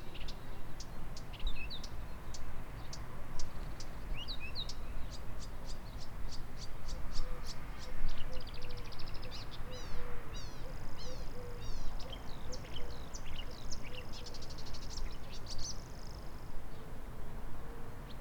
birds went crazy this morning, some of them Ive never heard before.
Poznan, Poland, 29 May 2011, 09:42